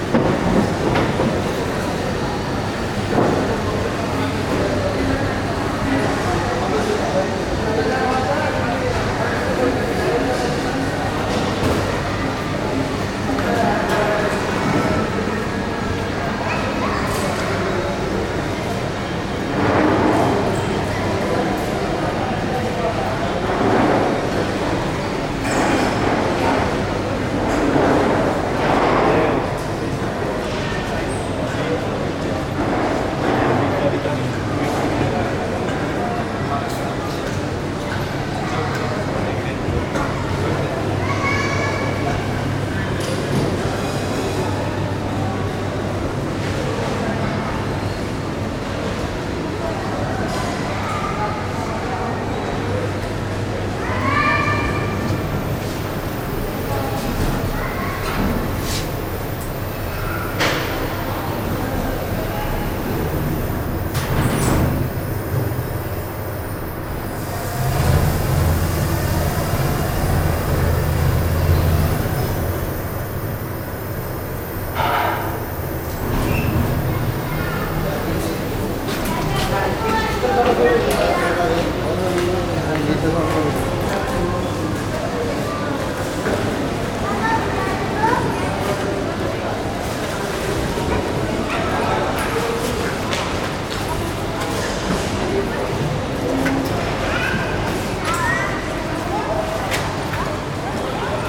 ONE MALL، Wadi Al Shaheeniya St, Doha, Qatar - 01 Mall, Qatar
One of a series of sound walks through Qatar's ubiquitous shopping malls
قطر Qatar, February 2020